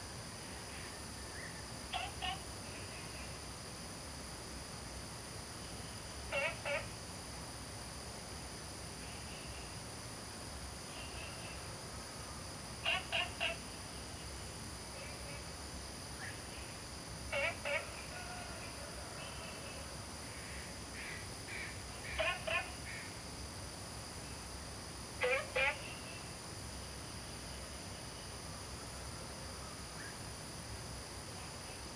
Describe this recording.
Early morning, Frog calls, Dogs barking, Birds singing, Chicken sounds, Zoom H2n MS+XY